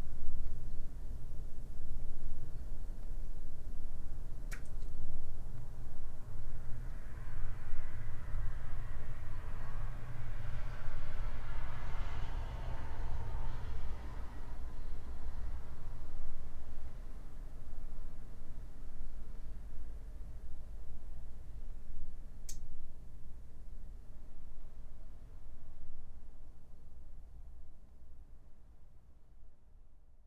Narkūnai, Lithuania, in abandoned basement
some abandoned basement in the meadow. probably, many years ago there was homestead.
17 February 2020, 13:00